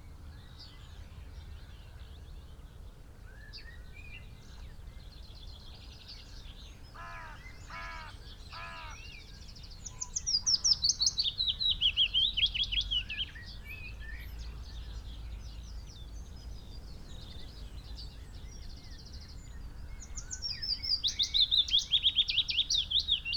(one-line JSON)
{"title": "Green Ln, Malton, UK - willow warbler song ...", "date": "2020-05-01 06:10:00", "description": "willow warbler song ... pre-amped mics in a SASS on tripod to Oylmpus LS 14 ... bird song ... calls from ... crow ... dunnock ... pheasant ... blackbird ... skylark ... yellow wagtail ... wren ... robin ... dunnock ... linnet ... red-legged partridge ... yellowhammer ... wood pigeon ... some traffic noise ... bird moves from this song post to others close by ...", "latitude": "54.12", "longitude": "-0.55", "altitude": "80", "timezone": "Europe/London"}